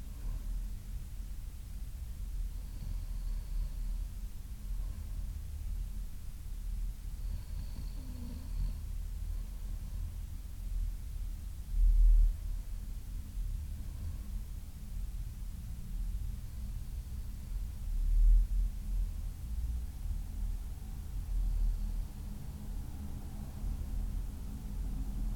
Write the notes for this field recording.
hotel. 5 minutes after sleep. 2 x dpa 6060 mics.